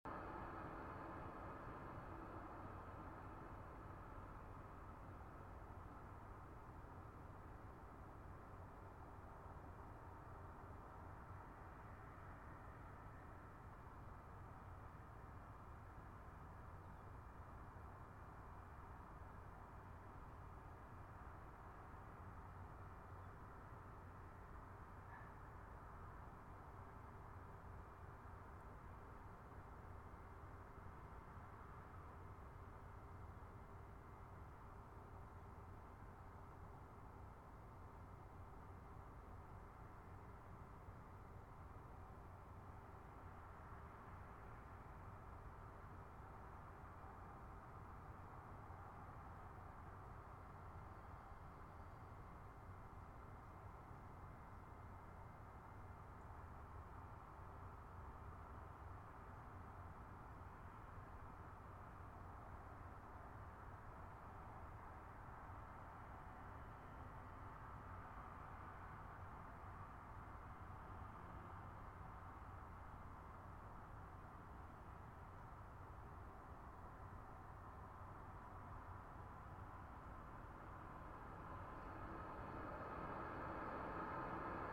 I decided to capture the natural sound of a morning in Amherstburg. I chose to record in the middle of a field to eliminate artificial sound from people.